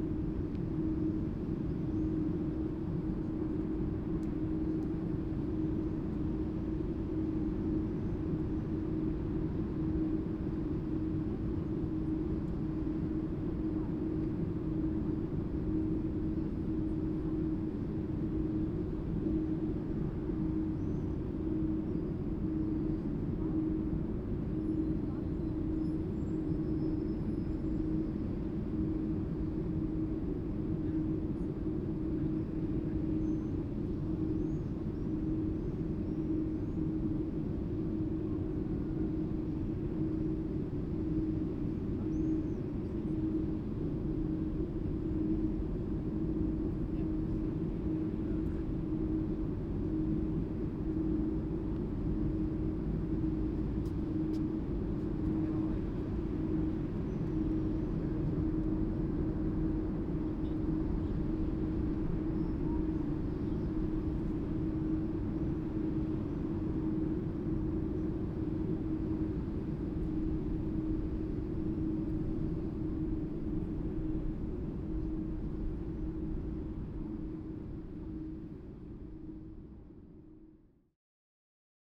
Independence Place, Minsk, Belarus, air conditioning
air conditioning system of the underground supermarket